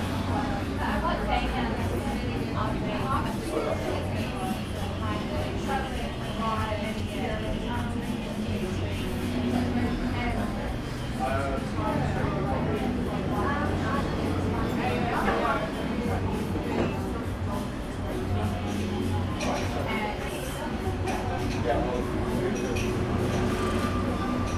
neoscenes: Cafe Mint for lunch